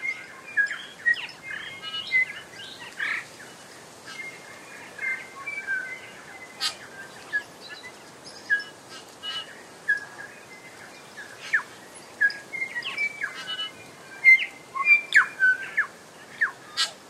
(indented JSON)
{
  "title": "Tawharanui Peninsula, New Zealand - Tawharanui Morning",
  "date": "2010-11-14 20:00:00",
  "latitude": "-36.37",
  "longitude": "174.85",
  "altitude": "47",
  "timezone": "Pacific/Auckland"
}